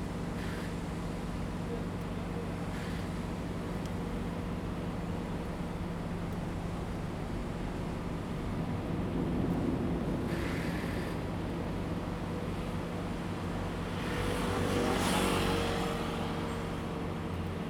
At the roadside, Traffic Sound, Sound of construction
Zoom H2n MS+XY
Hualien County, Taiwan, 29 August